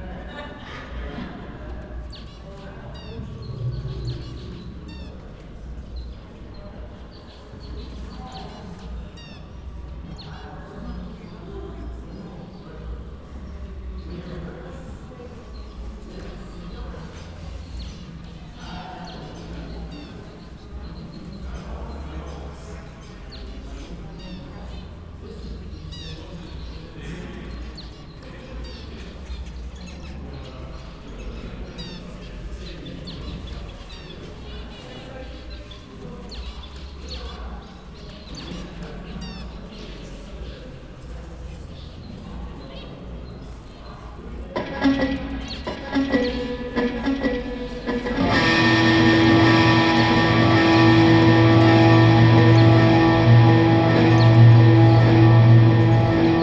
København K, København, Danemark - From here to ear
From Here to Ear, an installation by Celeste Boursier-Mougenot in Copenhagen Contemporary, recorded with Zoom H6
København K, Denmark, 15 December 2016